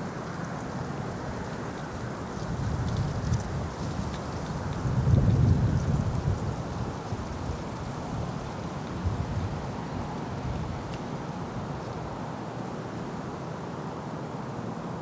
equipment used: Zoom H4, 2 x Octava MK12
2 November 2008, 06:30